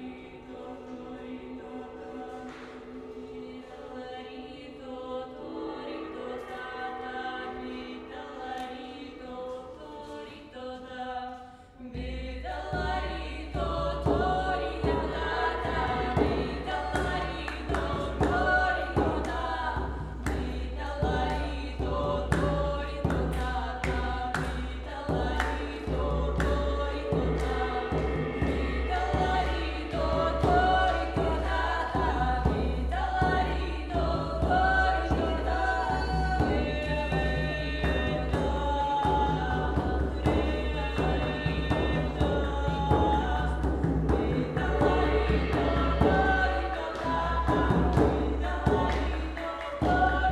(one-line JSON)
{"title": "Lithuania, Kulionys post-folklore band Sen Svaja", "date": "2014-06-14 21:30:00", "description": "post-folklore band Sen Svaja performing at the 30 m height astronomy tower", "latitude": "55.32", "longitude": "25.56", "altitude": "178", "timezone": "Europe/Vilnius"}